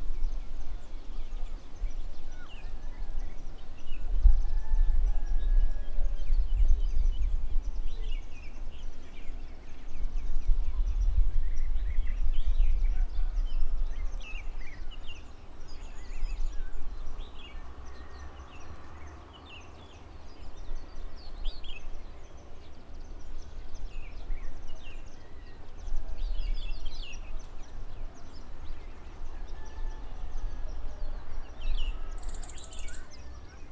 Enregistrement sonore.Quartier du Matarum, CILAOS Réunion. On a ici La Réunion des oiseaux de la forêt avec ceux des jardins, le bull bull de la Réunion (merle-pei) avec le bull bull orphée (merle-maurice, celui qui domine), plus les autres oiseaux tels le cardinal, la tourterelle malgache, les oiseaux blancs et oiseaux verts, les tec tec, au loin, des martins, des becs roses, avec un peu de coq et de chiens, et de la voiture tuning. Par rapport aux années 1990 même saison, cette ambiance sonore s'est considérablement appauvrie en grillons diurnes. Il ya des abeilles. On n'entend pas vraiment de moineaux.
Réunion